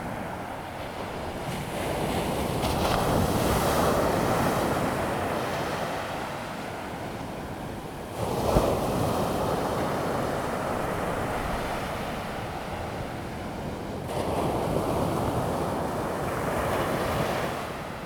前洲子, 淡水區, New Taipei City - the waves
On the beach, Sound of the waves
Zoom H2n MS+XY